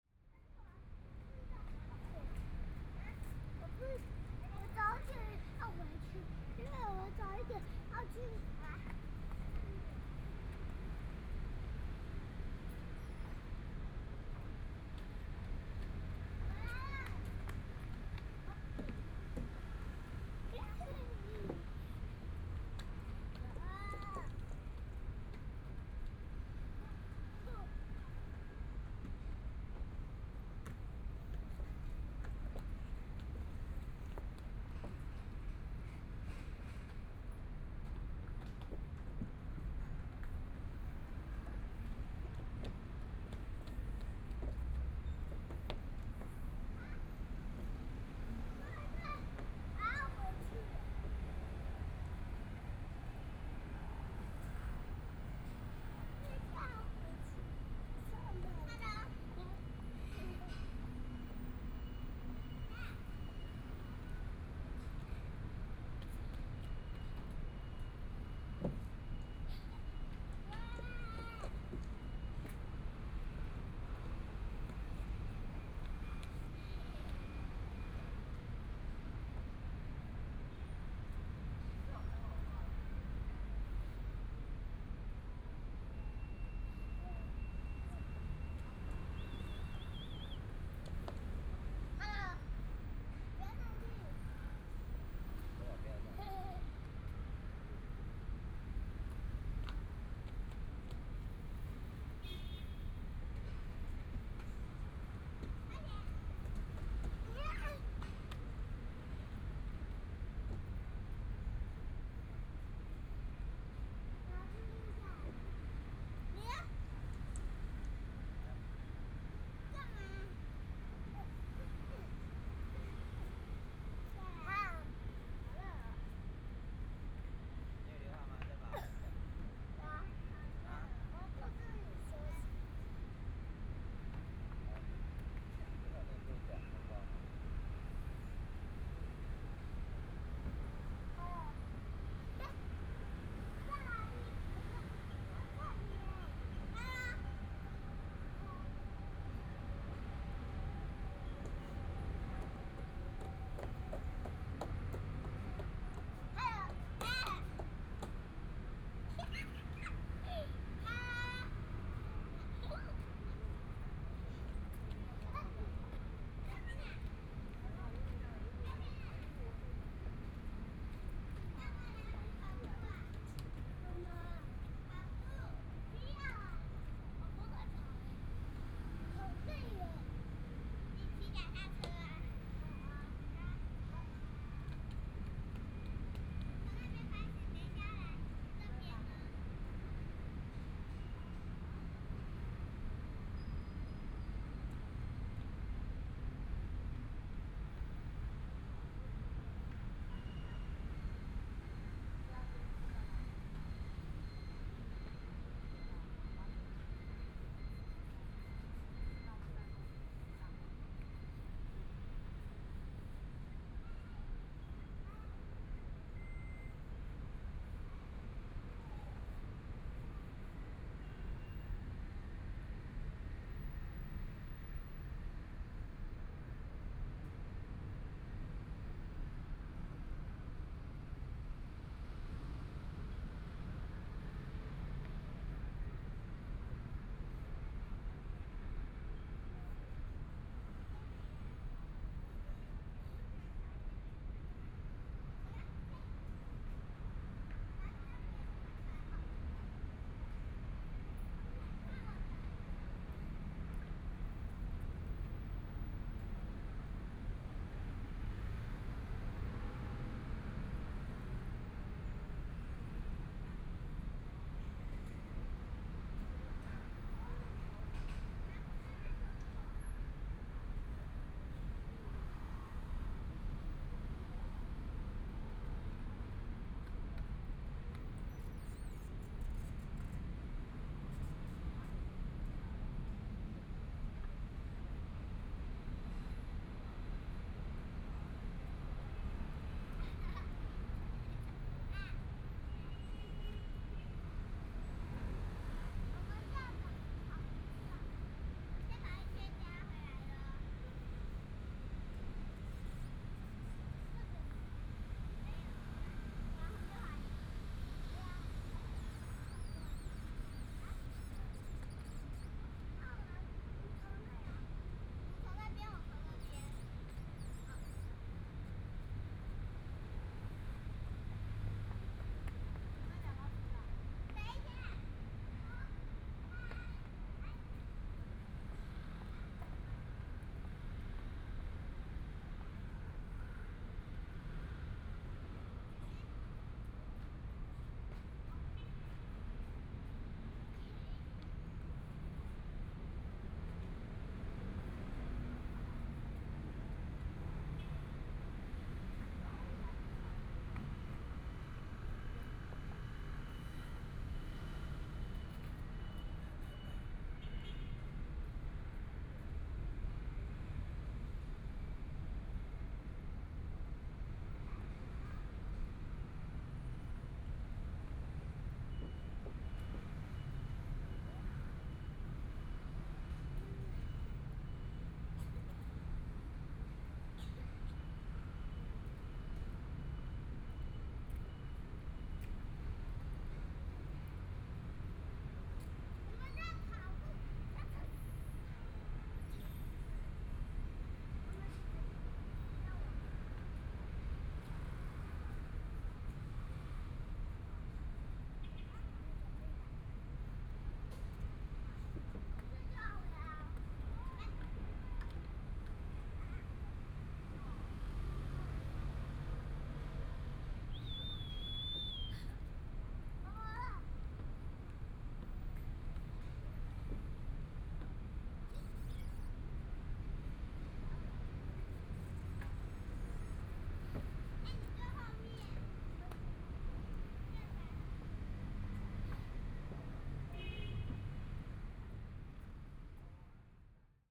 伊通公園, Taipei City - in the Park
in the Park, Traffic Sound, Children's play area
Please turn up the volume
Binaural recordings, Zoom H4n+ Soundman OKM II